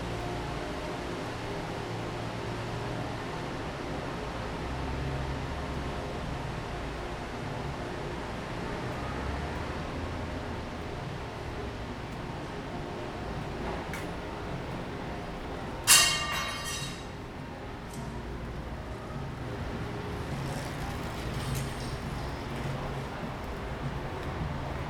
zeitraumexit, Mannheim - Kazimir Malevich, eight red rectangles
street cleaning machine, poor dog, 11 in the morning and they drink to life
Mannheim, Germany